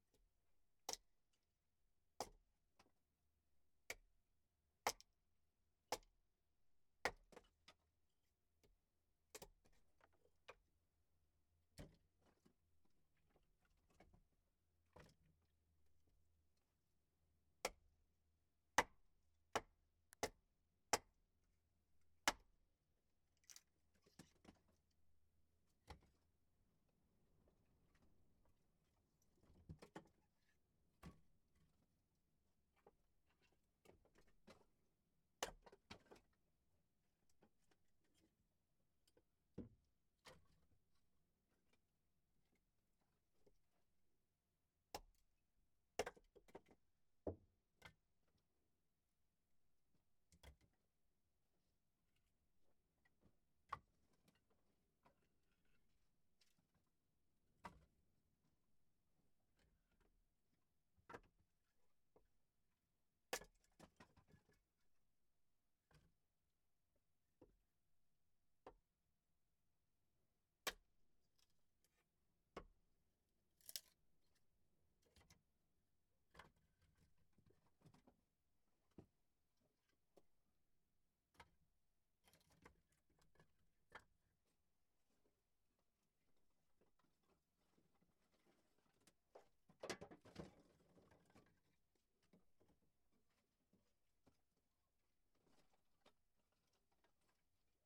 Sněžné, Vysočina, Czech Republic - chopping wood in the shed
The recording is from the house, where originally lived tailor and adventurer Adolf Zelený (since start of 20th century). Now, the house belong the family Pfann, because this family - especially Květoslava Pfannová and his husband Jaroslav - helped this man in the last decades and in the end of his life (in 1988), they care of him. Family Pfann lived in the house next door, and the head of family, Jaroslav, was a evangelic pastor in this village.
Because Adolf Zelený, this peculiar, strange, but really good man, had no more children, wife or siblings, he hand over his small house to the Pfann family.
Květoslava Pfannová was last of four children of the Antonín and Anna Balabán. Antonín was born in village Křídla, 15 km from village Sněžné. But because hwe was a evangelic pastor too, he was moving a lot of times in his life, and Květoslava was born in village Boratín (today on Ukraine), where this family lived 15 years, before the second world war.
Kraj Vysočina, Jihovýchod, Česká republika